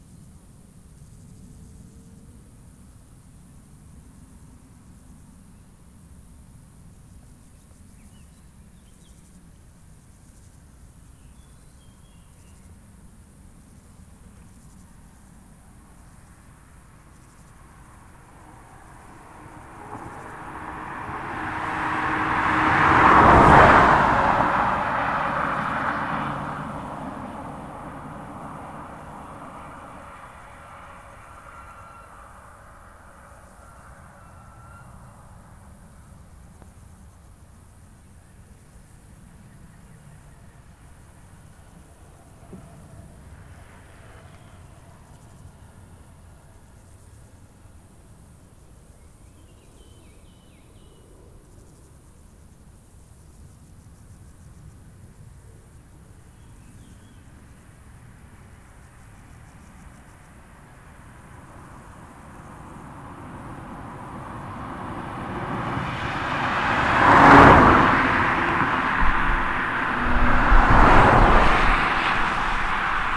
Rte de Vions, Chindrieux, France - Le talus
Le talus d'herbes sèches abrite de nombreux insectes, dans cette ligne droite les voitures passent vite. ZoomH4npro posé sur la selle du vélo.